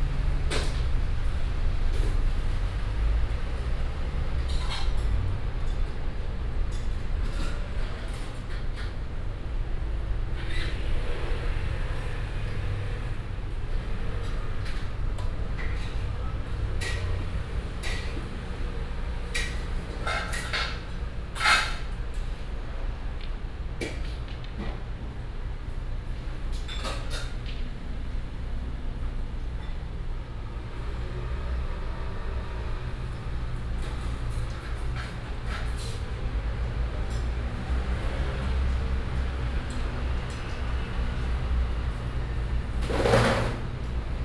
Guangming St., Xindian Dist., New Taipei City - Beef noodle shop
Beef noodle shop
New Taipei City, Taiwan, 5 December 2016